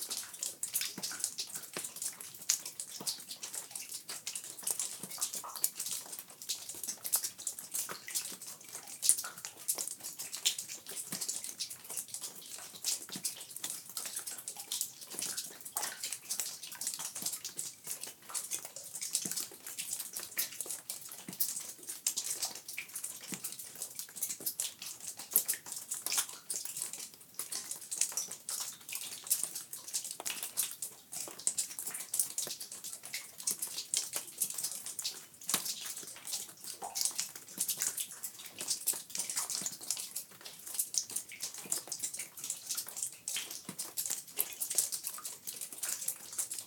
{"title": "Double Hole Crater Lava Flow - Ice cave near Double Hole Crater", "date": "2022-05-18 12:00:00", "description": "This recording was collected inside an ice filled lava tube(ice cave) in the Double Hole Crater lava flow. During the winter months cold air collects inside the lava tube and, because it has no way to escape, it remains throughout the year. As water seeps in from above it freezes inside the cold air filled lava tube. This was recorded in the spring and snowmelt and rainwater were percolating through the lava and dripping from the lava tube ceiling onto the solid ice floor. This was recorded with a Wildtronics SAAM microphone onto a Zoom F6 recorder.", "latitude": "41.50", "longitude": "-121.62", "altitude": "1674", "timezone": "America/Los_Angeles"}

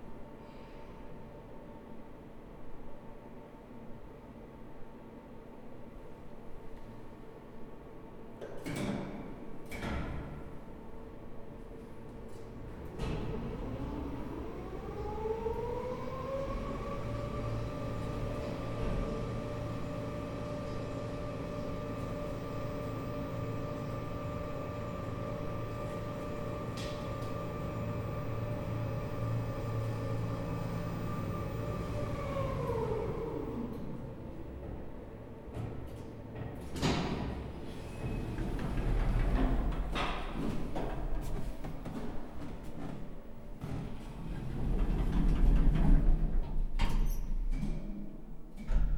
{"title": "City Stay Hostel, Berlin, elevator", "date": "2010-10-09 15:20:00", "latitude": "52.52", "longitude": "13.41", "altitude": "49", "timezone": "Europe/Berlin"}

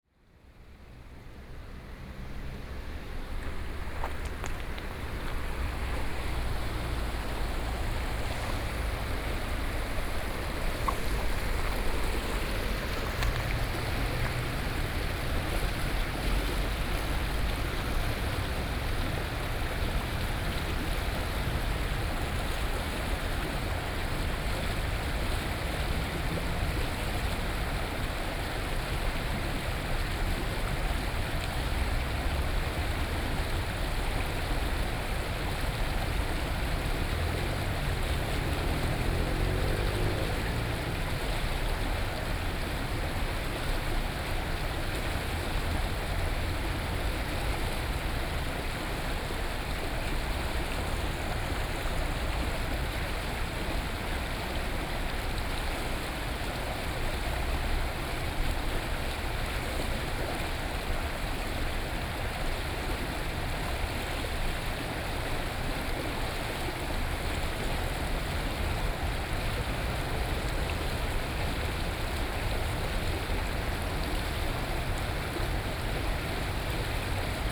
{"title": "Nankan River, Taoyuan City - The sound of water", "date": "2013-09-11 08:46:00", "description": "The sound of water, Sony PCM D50 + Soundman OKM II", "latitude": "25.00", "longitude": "121.32", "altitude": "97", "timezone": "Asia/Taipei"}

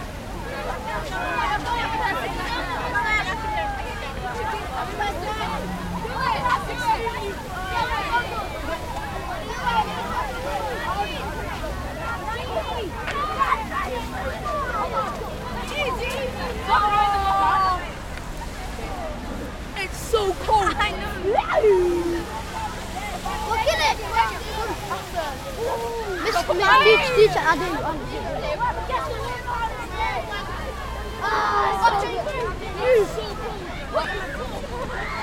{"title": "Londres, Royaume-Uni - Fontaine 2", "date": "2016-03-15 13:43:00", "description": "Near the fountain, Zoom H6", "latitude": "51.51", "longitude": "-0.13", "altitude": "19", "timezone": "Europe/London"}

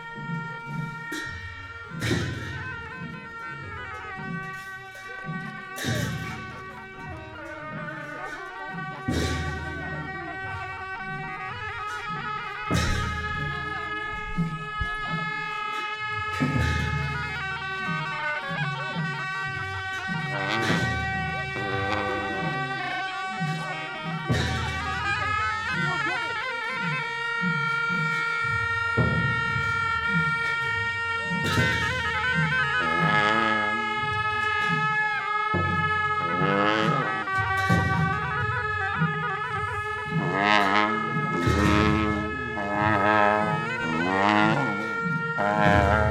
{"title": "8J6V5HMH+8R - Leh - Ladak - Inde", "date": "2008-05-20 09:43:00", "description": "Leh - Ladak - Inde\nProcession sur les hauteurs de la ville\nFostex FR2 + AudioTechnica AT825", "latitude": "34.18", "longitude": "77.58", "altitude": "3660", "timezone": "Asia/Kolkata"}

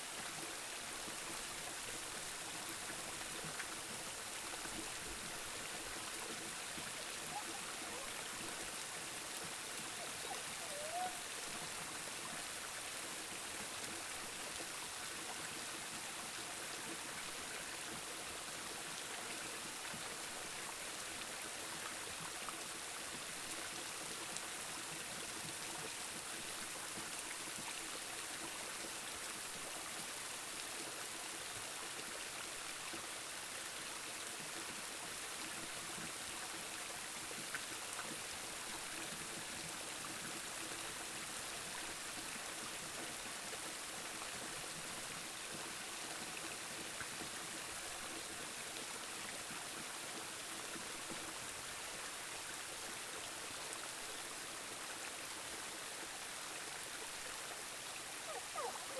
{"title": "Aniseed Valley Road, Aniseed Valley, New Zealand - Waterfall", "date": "2014-01-02 16:09:00", "description": "Water gently making its way down the rock-face to a small pool. Australian sheep dog in the background", "latitude": "-41.38", "longitude": "173.18", "altitude": "203", "timezone": "Pacific/Auckland"}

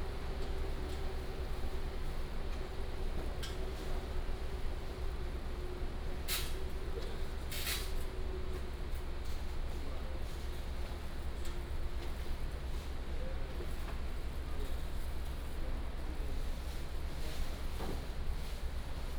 {"title": "Taipei Main Station - In the station platform", "date": "2016-07-18 12:38:00", "description": "In the station platform", "latitude": "25.05", "longitude": "121.52", "altitude": "29", "timezone": "Asia/Taipei"}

